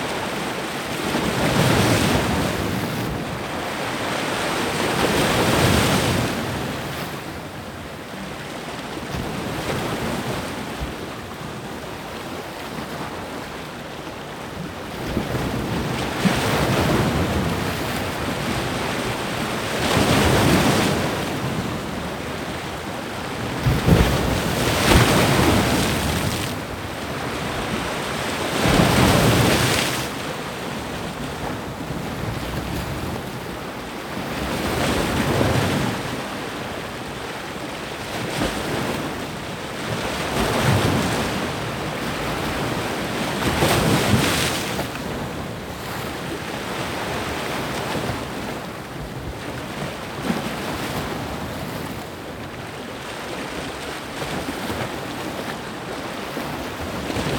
Hiddensee, Deutschland - Hiddensee - waves hitting rocks, stiff breeze
Hiddensee - waves hitting rocks, stiff breeze. [I used the Hi-MD recorder Sony MZ-NH900 with external microphone Beyerdynamic MCE 82 with windshield and fur]